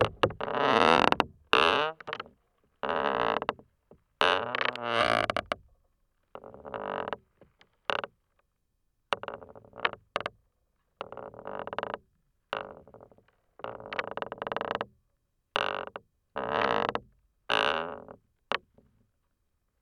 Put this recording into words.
wooden belaying pin of a sailboat, contact mic recording, the city, the country & me: august 2, 2012